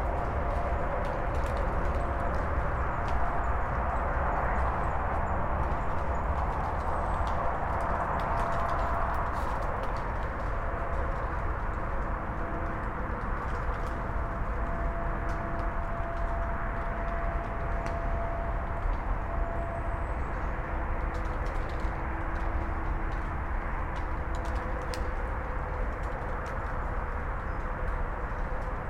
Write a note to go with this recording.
frozen drops on their way through tree crowns when leaves were bright orange, accompanied with highway traffic 100 meters below